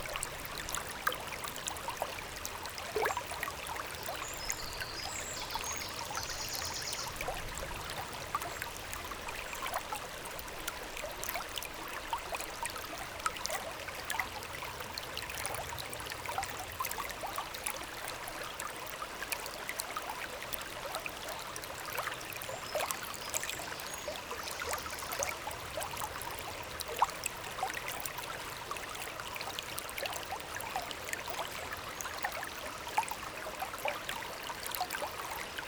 Oberwampach, Luxembourg - Wilz river
On a very bucolic and remote landscape, the Wilz river flowing quietly.
1 July 2018, 10:30